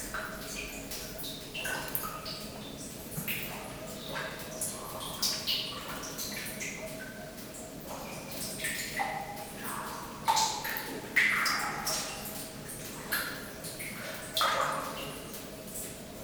Namur, Belgique - Underground mine
Short soundscape of an underground mine. Rain into the tunnel and reverb.